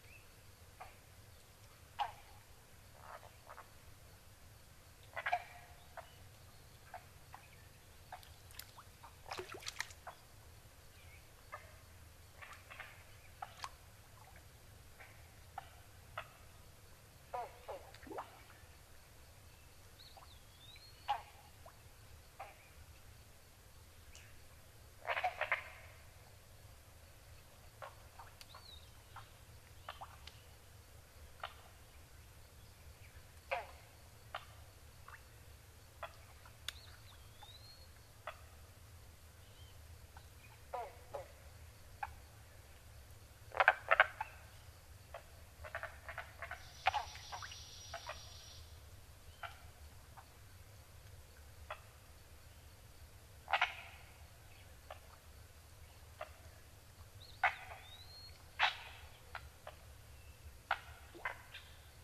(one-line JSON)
{"title": "Wharton State Forest, NJ, USA - Bogs of Friendship, Part Three", "date": "2007-07-30 04:30:00", "description": "Dawn settles in along a quiet bog in Friendship.", "latitude": "39.74", "longitude": "-74.58", "altitude": "18", "timezone": "America/New_York"}